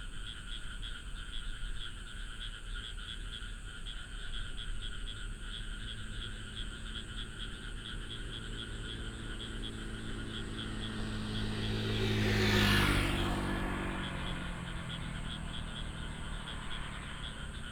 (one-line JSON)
{"title": "八德區霄裡路, Taoyuan City - Night farmland", "date": "2017-08-11 20:31:00", "description": "Rice Fields, Traffic sound, Frog sound", "latitude": "24.93", "longitude": "121.26", "altitude": "143", "timezone": "Asia/Taipei"}